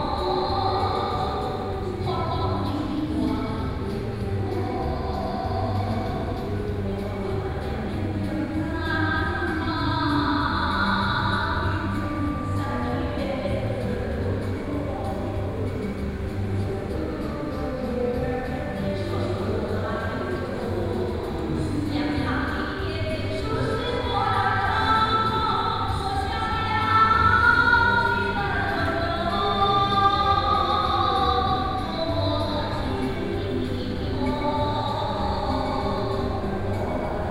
Chenggong viaduct, Taoyuan County - Woman is singing
In the bridge below the community center, Sony PCM D50 + Soundman OKM II
Taoyuan County, Taiwan, 11 September